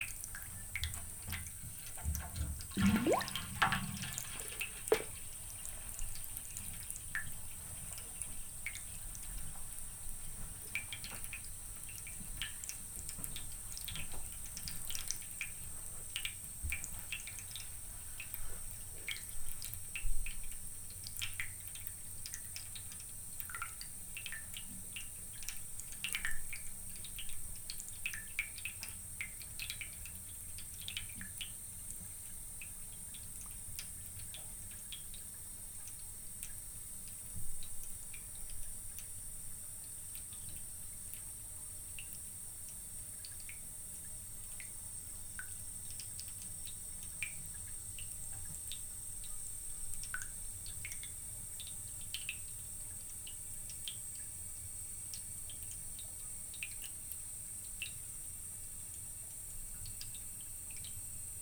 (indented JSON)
{
  "title": "Unnamed Road, Malton, UK - pulling the bath plug ...",
  "date": "2020-11-20 18:05:00",
  "description": "pulling the bath plug ... olympus ls 14 integral mics ... on a tripod ...",
  "latitude": "54.12",
  "longitude": "-0.54",
  "altitude": "76",
  "timezone": "Europe/London"
}